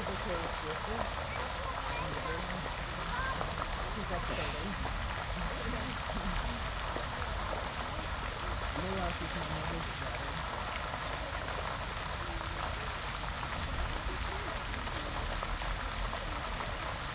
University Maribor - fountain, ambience
fountain in front of the university building